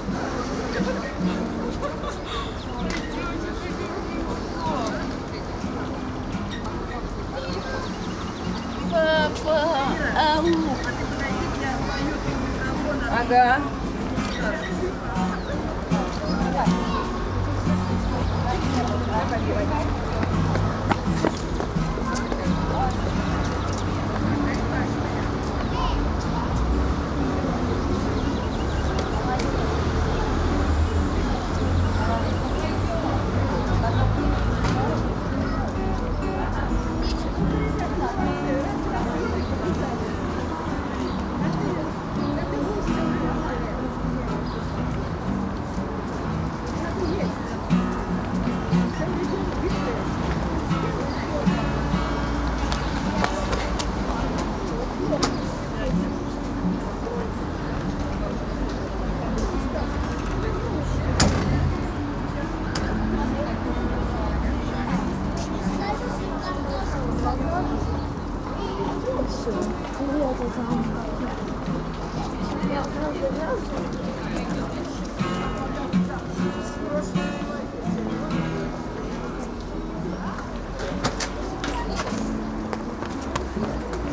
MIC Cathedral yard, A BOY PLAING GUITAR
Moscow Immaculate Conception Catholic Cathedral yard, A boy plaing guitar, Family Day